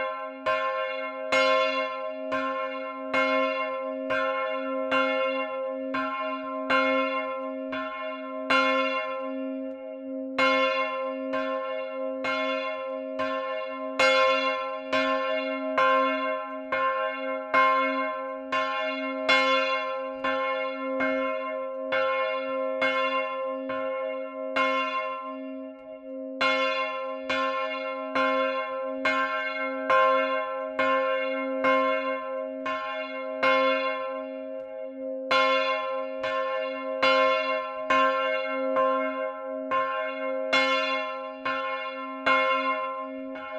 Longny au Perche
Chapelle Notre Dame de Pitié
Volée